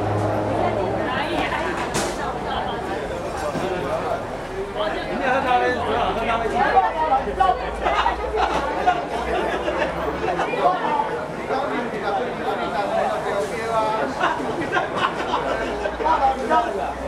三重果菜市場, New Taipei City, Taiwan - wholesale market
Fruits and vegetables wholesale market
Sony Hi-MD MZ-RH1 +Sony ECM-MS907